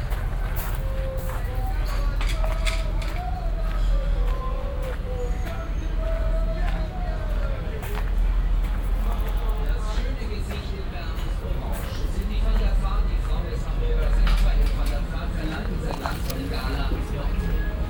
cologne, stadtgarten, biergarten - koeln, stadtgarten, biergarten, public viewing
stadtgarten biergarten, nachmittags - public viewing zur em 2008
projekt klang raum garten - soundmap stadtgarten